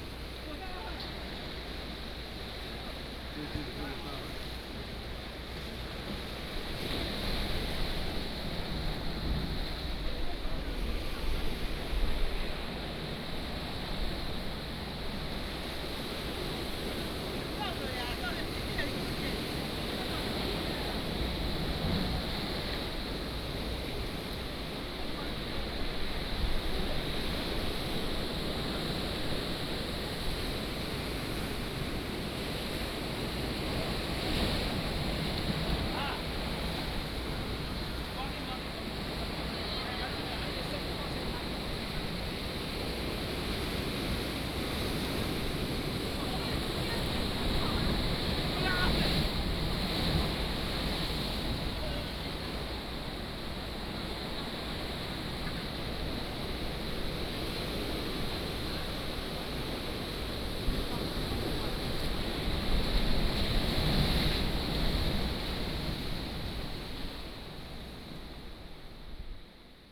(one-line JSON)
{"title": "橋仔漁村, Beigan Township - Small port", "date": "2014-10-13 17:07:00", "description": "Small port, Sound of the waves, tourists", "latitude": "26.24", "longitude": "119.99", "altitude": "14", "timezone": "Asia/Shanghai"}